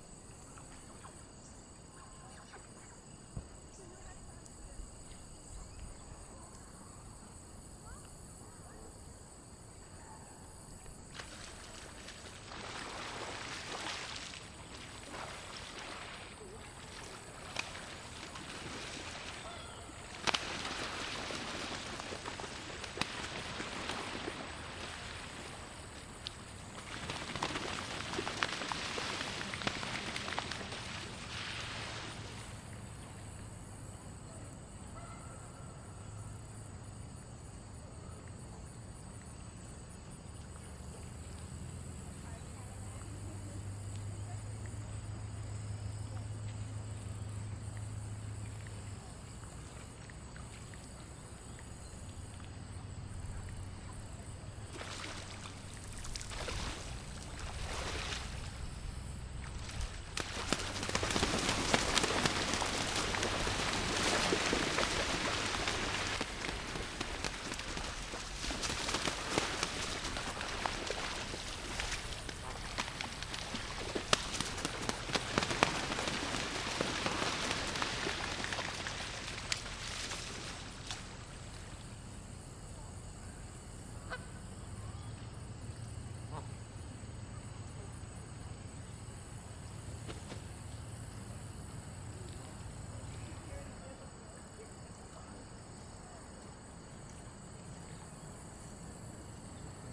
Sounds heard sitting on the shore of Kunkel Lake (Canadian Geese slapdown), Ouabache State Park, Bluffton, IN, 46714, USA
Indiana, USA, 20 October 2019, 15:26